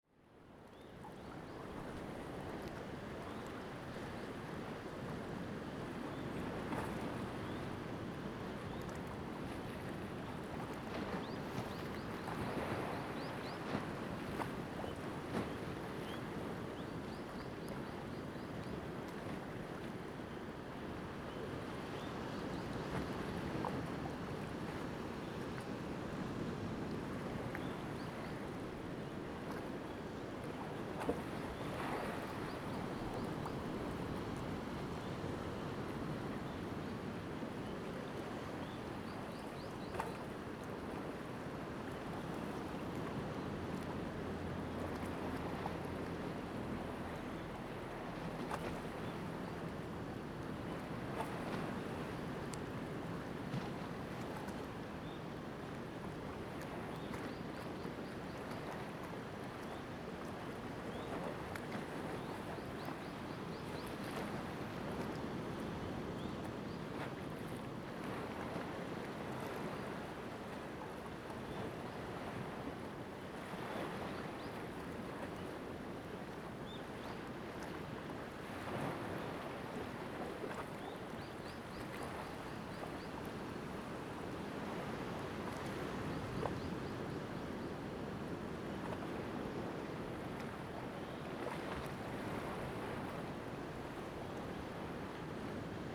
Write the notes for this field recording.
In the fishing port, Waves, Zoom H2n MS+XY